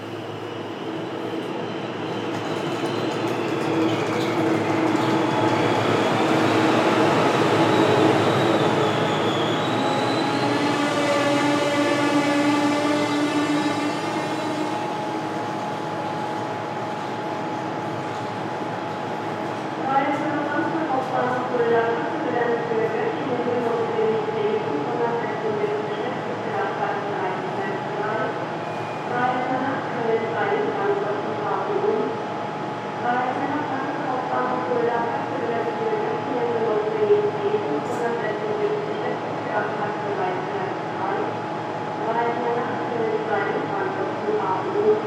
Mannheim Hbf, Willy-Brandt-Platz, Mannheim, Deutschland - platform announcementsstorm sabine train canceld
after the storm sabine the rail traffic in germany collapsed for some hours, here a recording of the main station mannheim with corresponding announcements.
zoom h6